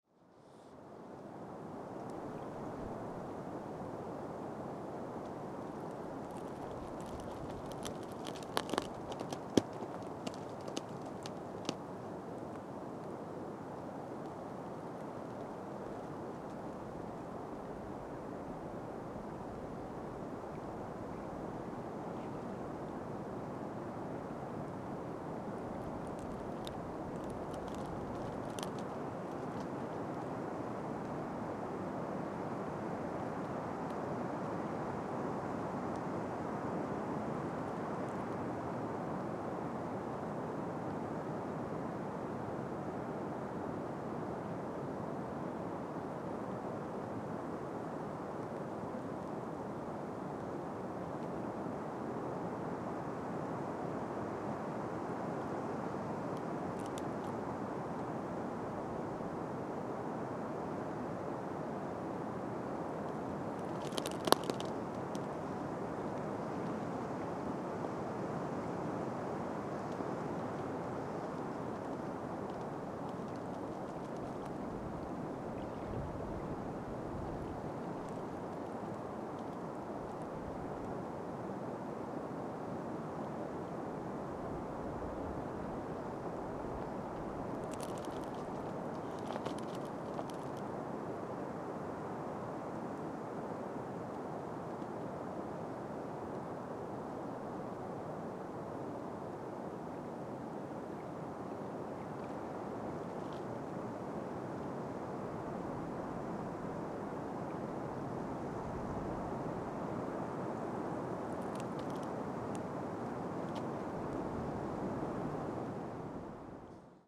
Lithuania, at river Sventoji, cracking of thin ice
thin ice cracking at the river in a windy forest rustle